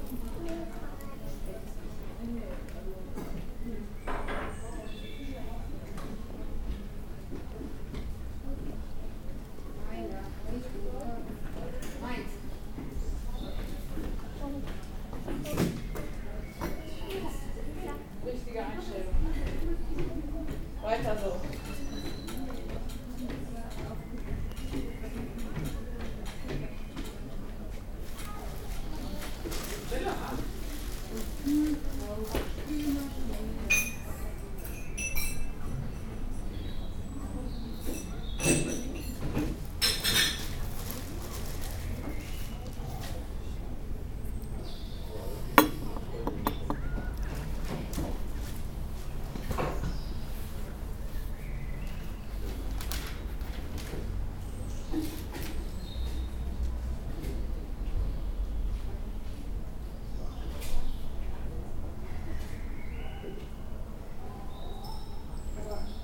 8m2stereo's favorite hangout hallmackenreuther - cologne, bruesseler platz, hallmackenreuther, noon
mittagszeit im temporär musikalsich stillen und fenster offenen hallmackenreuther, geschirr und gespräche
soundmap nrw - social ambiences - sound in public spaces - in & outdoor nearfield recordings
26 June 2008